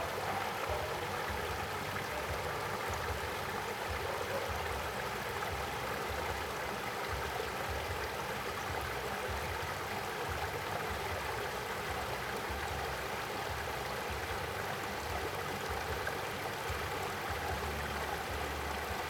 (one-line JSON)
{"title": "壽豐鄉米棧村, Hualien County - Next to the stream", "date": "2016-12-14 13:51:00", "description": "Next to the stream, Small tribe, The frogs chirp, Dog barking\nZoom H2n MS+XY +Sptial Audio", "latitude": "23.80", "longitude": "121.52", "altitude": "78", "timezone": "GMT+1"}